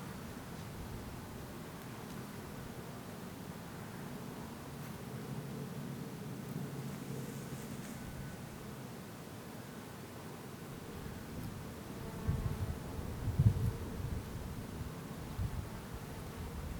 Lough gur, Co. Limerick, Ireland - Carraig Aille forts ring forts
Two ring forts dating to the 8th-11th Centuries. Dwellings have been found both within and out of the ring forts, and Bronze Age, Iron Age, and Stone Age tools were also discovered, along with jewelry and bone implements.
Today, this was the first place we found that was dominated by the natural soundscape.